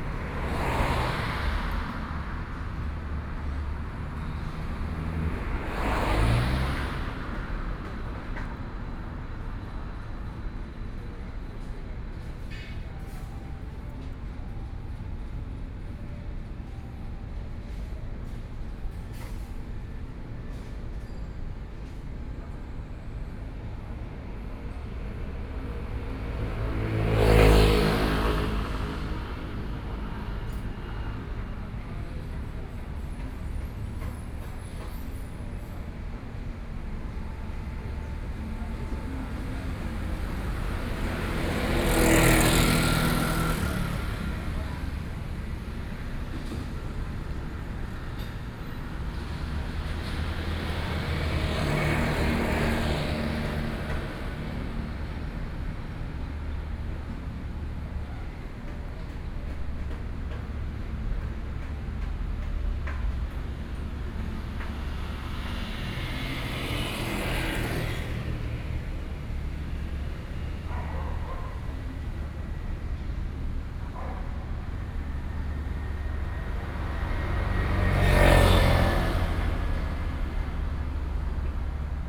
Sitting in front of the temple, Sony PCM D50 + Soundman OKM II
11 September 2013, ~09:00